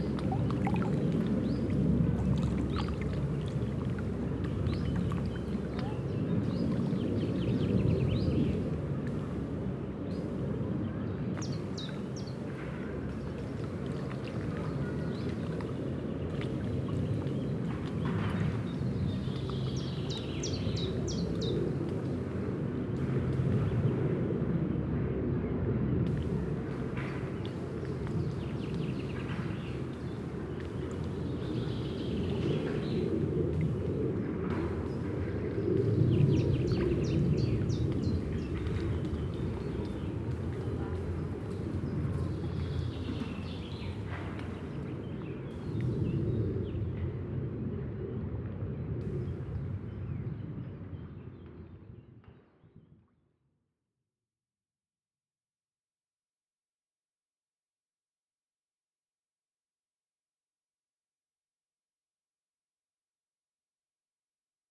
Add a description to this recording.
Am Ufer des Baldeney Sees an einem sonnigen Morgen im Frühsommer. Die Ambience des Sees mit Enten, Vögeln und dem Plätschern des Wassers am Seeufer. Ein Flugzeug kreuzt den Himmel. Im Hintergrund Spaziergänger. At the seaside on a sunny early summer morning.The ambience of the lake with water and duck sounds. A plane is crosing the sky. Projekt - Stadtklang//: Hörorte - topographic field recordings and social ambiences